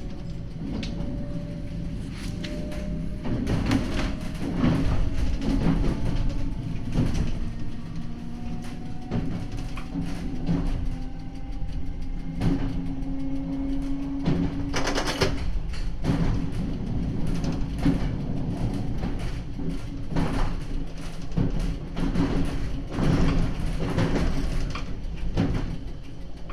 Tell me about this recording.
A ride in the driver's cabin of a Belgian AM62 electric railcar going from Mons to Nimy. Binaural recording with Zoom H2 and OKM earmics.